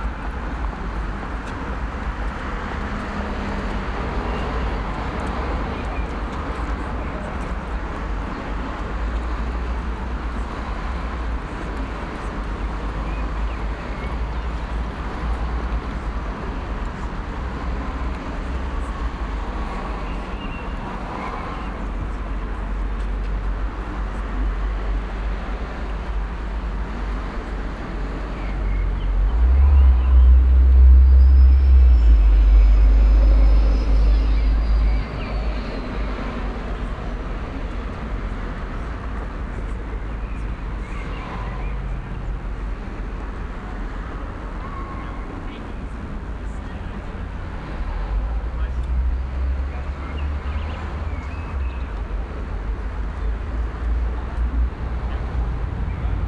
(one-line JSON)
{"title": "Wilmersdorf, Berlin, Deutschland - lehniner platz", "date": "2016-04-17 19:30:00", "latitude": "52.50", "longitude": "13.30", "altitude": "40", "timezone": "Europe/Berlin"}